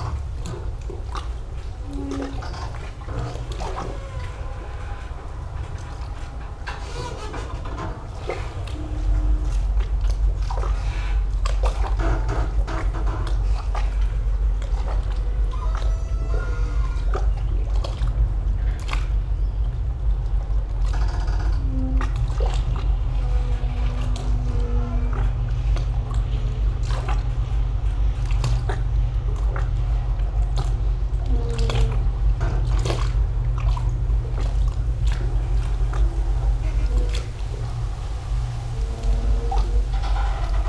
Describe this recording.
squeaking ship, waves lapping against the quay wall, passing motorboats, the city, the country & me: october 5, 2014